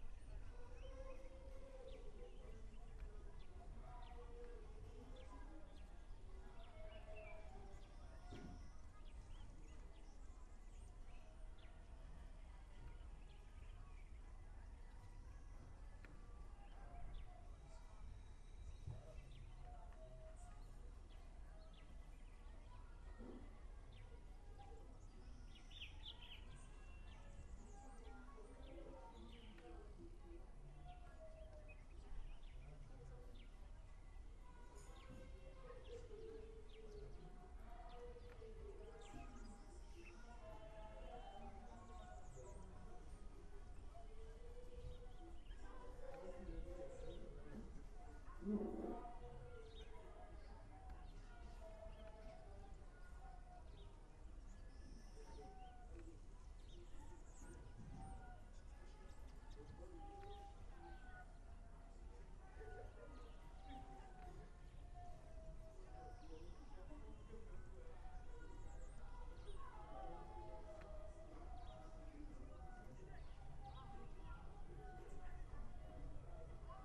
world listening day, birds, truck, frogs, church, humans, plane
Lekki Peninsula, Nigeria, 18 July 2010, 11:05